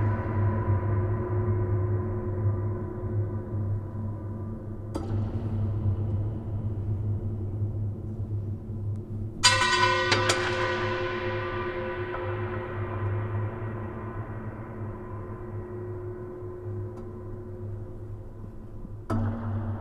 Havnevej, Struer, Danmark - Using an old empty oil tank as an instrument to create sounds
Using an empty oil tank as an instrument to create sounds. Throwing metal parts into the tank, knocking on sides etc. The tanks/silos are situated at the old industrial part of Struer harbor. Sound recorded with Zoom H5 recorder.